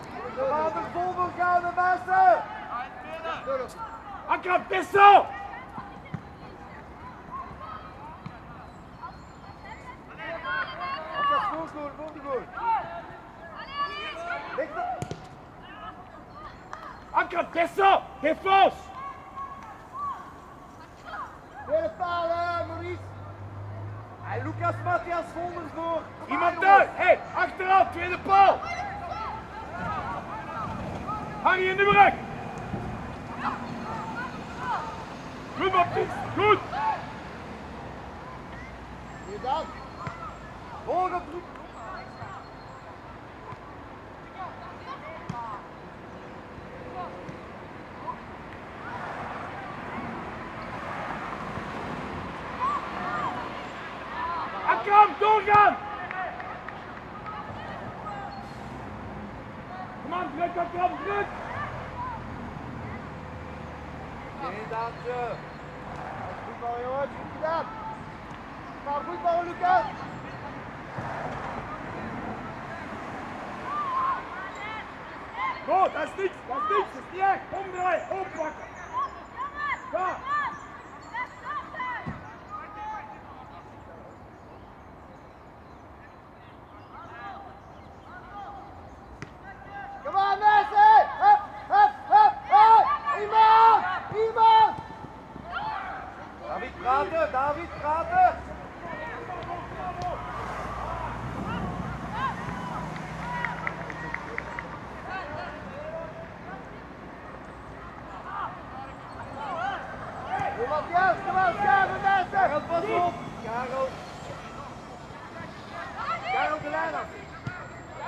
Coaches shouting instructions at the young players, busses and cars passing by on the road, distant birds.
Tech Note : Sony PCM-D100 internal microphones, wide position.